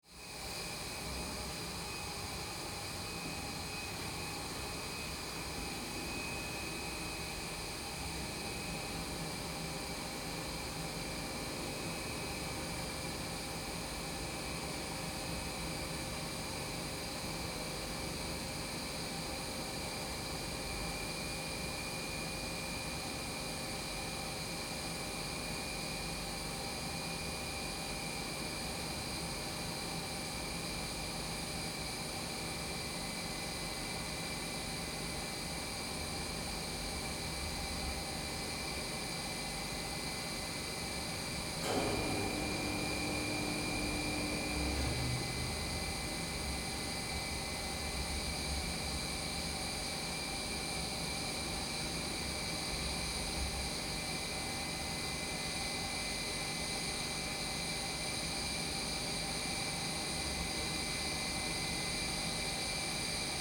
Brewery Moucha, sounds of brewing craft beer, Údolní, Praha-Praha, Czechia - Brewery atmosphere
The general brewery atmosphere when the processes are on-going and it's a question of waiting the appropriate amount of time.
Praha, Česko, 7 April 2022